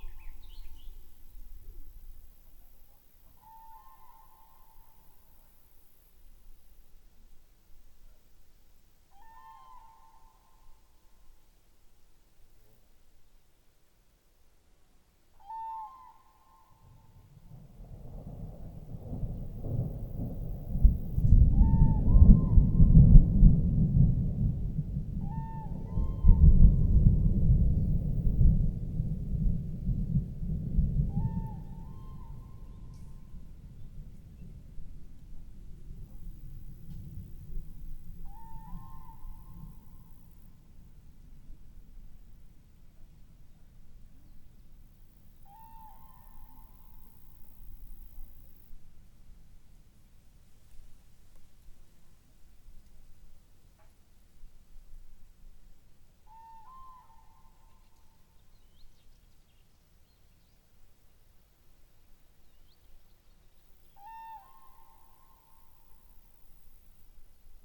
cranes passing over the bog as a thunderstorm approaches at a small cabin in southeast estonia